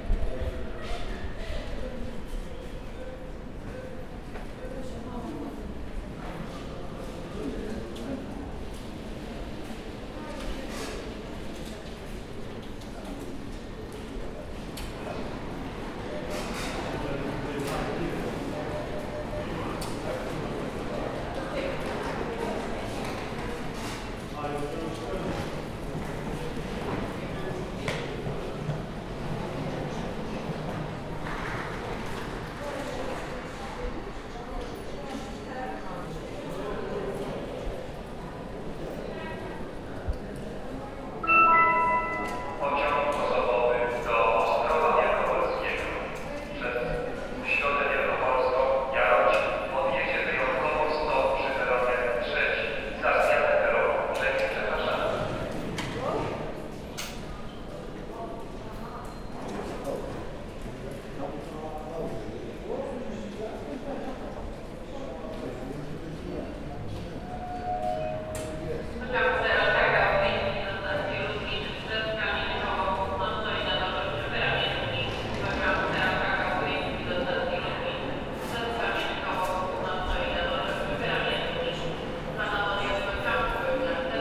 Poznan, main train station, western entrance - western entrance
not very busy place due to construction. travelers buying tickets, magazines, bottled water, coffee from vending machines. a homeless person trash talking at pigeons. the noise at the begging is the sound a ice cream freezer